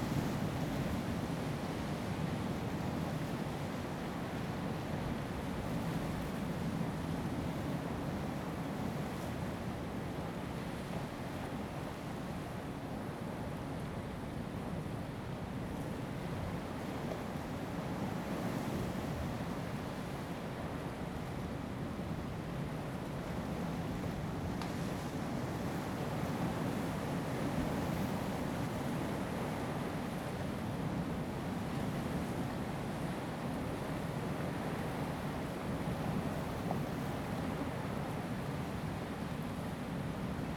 柚子湖, Lüdao Township - sound of the waves
behind the rock, sound of the waves
Zoom H2n MS +XY